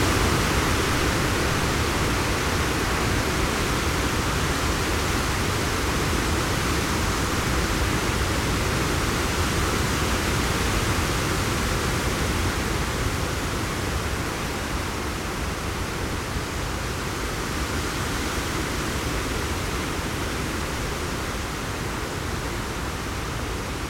Kiauliupys, Lithuania, wind
Strong wind at sand quarry. Google maps are slightly outdated, so it not show today's sand quarry territory...Because od really strong wind I was forced to hide my micro Ushi mics under the rooths of fallen tree.
Utenos apskritis, Lietuva